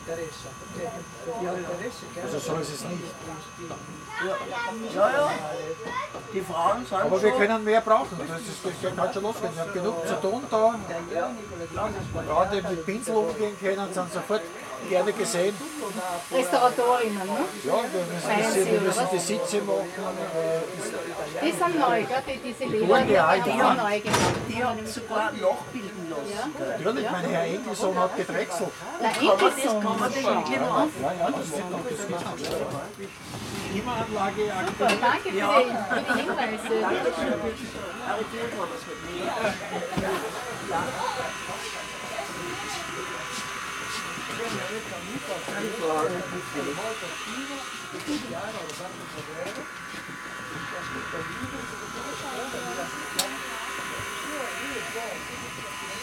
Siller-Straße, Strasshof an der Nordbahn, Österreich - locomotive ride
Eisenbahnmuseum Strasshof: short passenger ride with historic steam locomotive
Niederösterreich, Österreich, 1 May 2022